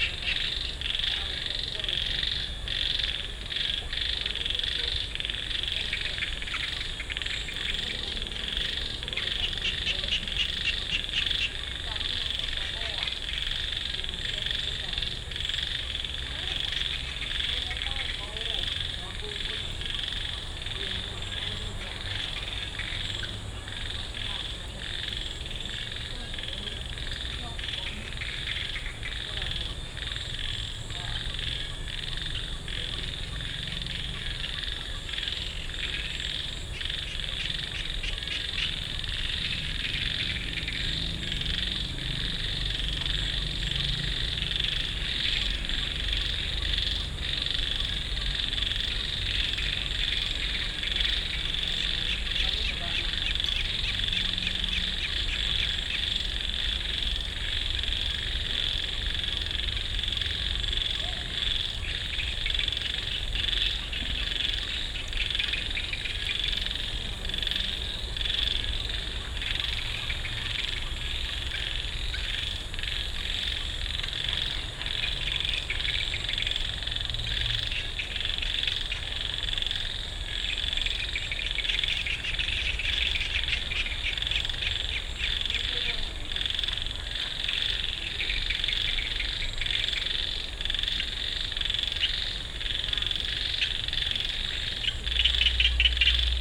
Tambon Hang Dong, Amphoe Hot, Chang Wat Chiang Mai, Thailand - Mehr Klapperfroschatmo Chom Thong bei Puh Anna
More clattering frogs and birds at the pond and rice field in front of Puh Annas most beautiful guesthouse, very peaceful and lively at the same time.